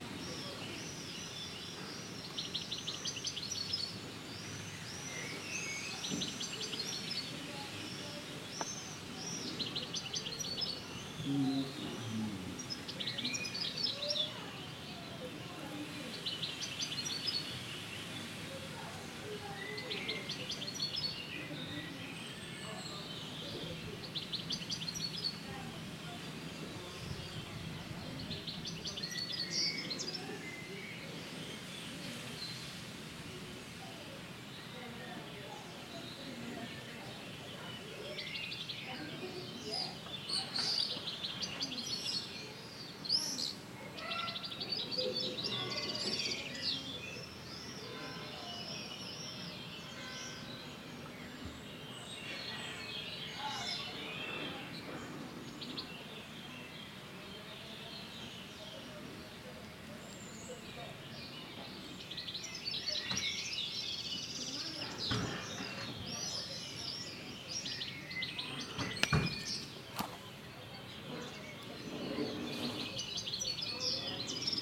Via S. Francesco, Serra De Conti AN, Italia - lunchtime soundscape
You can hear cooking sounds and some fragments of conversation of a mother and her children an house near the recording spot and the church bells far away. Many bird sounds too that surround the place.
(binaural: dpa into Zoom H6)
Serra De Conti AN, Italy, 26 May 2018